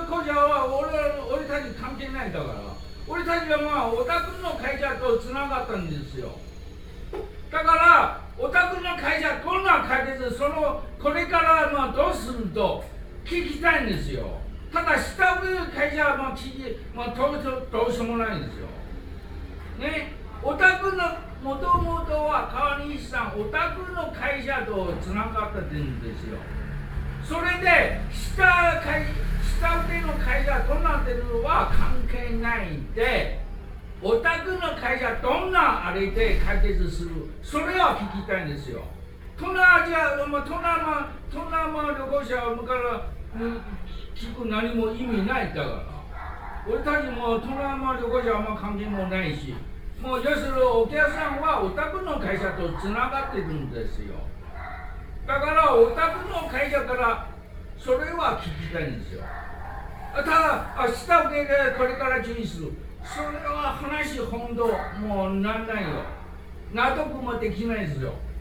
Ln., Gangshan Rd., Beitou Dist., Taipei City - Japanese conversation
On the phone in the room, and use of Japanese conversation, Binaural recordings, Sony PCM D50 + Soundman OKM II
Beitou District, Taipei City, Taiwan, November 2012